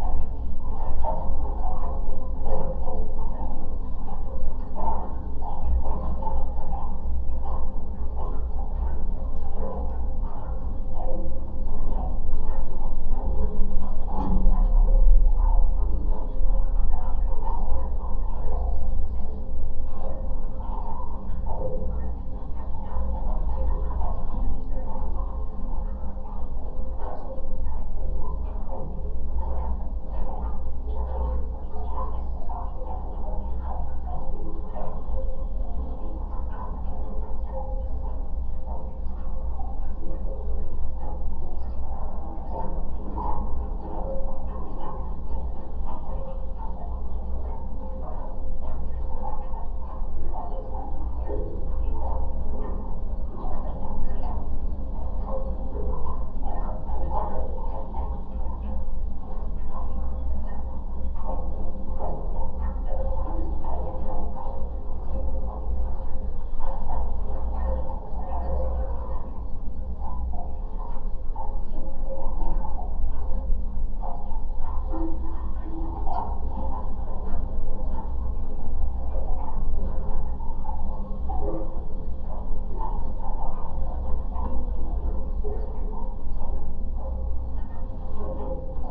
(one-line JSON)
{"title": "Pakalniai, Lithuania, abandoned bridge", "date": "2020-06-23 13:40:00", "description": "abandoned metallic bridge construction on the lake: it surely remembers soviet times when there was recreation base...a pair of contact mics and geophone on it.", "latitude": "55.44", "longitude": "25.47", "altitude": "162", "timezone": "Europe/Vilnius"}